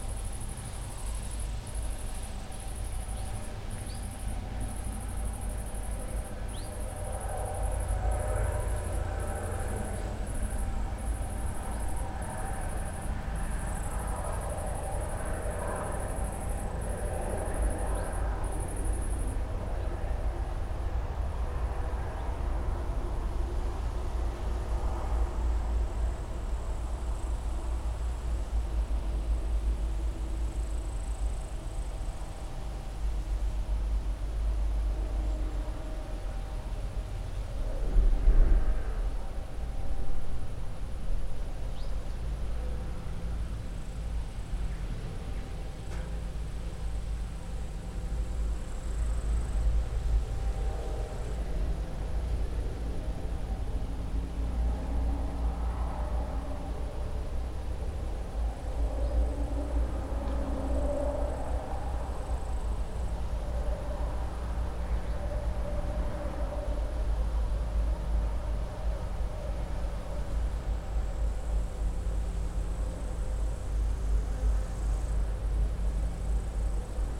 Utena, Lithuania, in abandoned factory
the building of abandoned factory (soviet era relict). echoes of traffic, winds and... ages
Utenos rajono savivaldybė, Utenos apskritis, Lietuva, 10 September